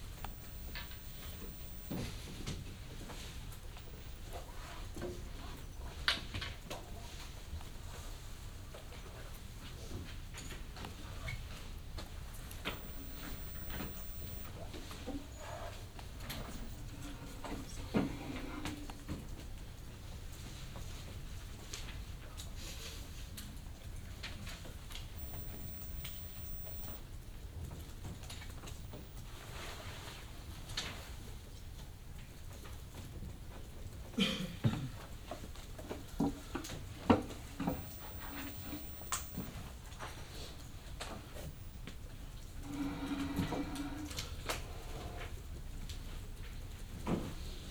Quiet sounds, Den Haag, Nederland - Quiet sounds in the library
Quiet sounds in the reading room of the Central Library in The Hague.
Binaural recording.
8 April, 12:30